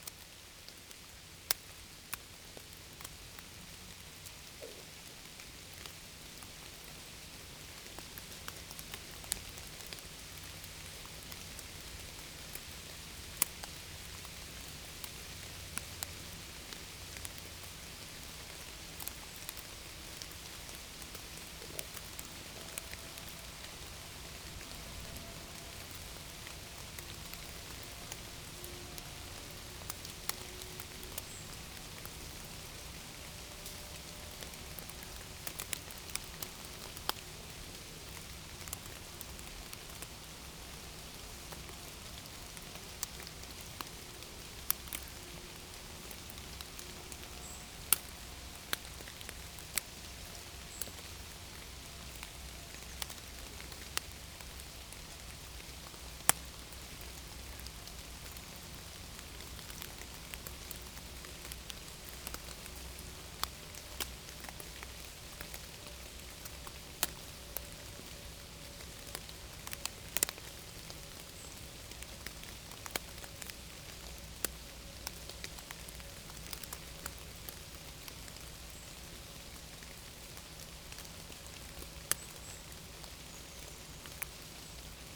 Nod-sur-Seine, France - Sad rain
Walking by the woods in a quite sad place, rain is falling slowly. On this morning, we are absolutely alone in the forests and the fields during hours.
31 July 2017, 11:00